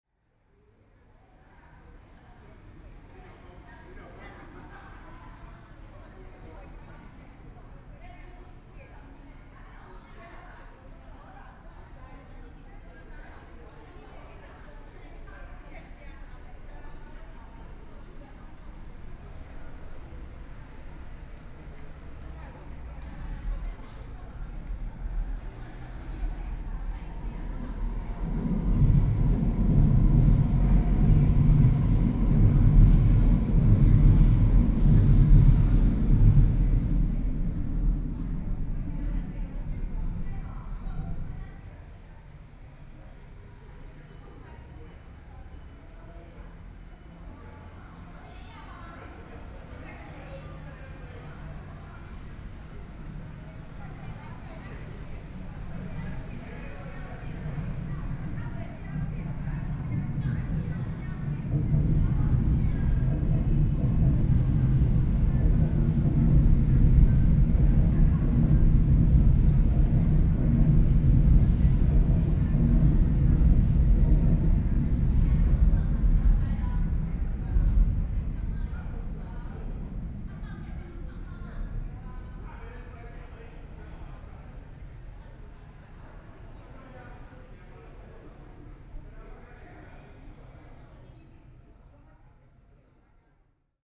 北投區大同里, Taipei City - MRT train sounds
under the track, MRT train sounds
Please turn up the volume a little. Binaural recordings, Sony PCM D100+ Soundman OKM II
Beitou District, Taipei City, Taiwan, 17 April, 21:06